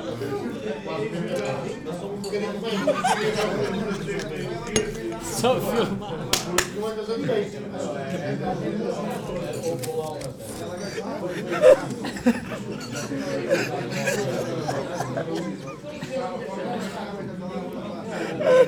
restaurant: Carnival 2010 - 2 restaurant: Carnival 2010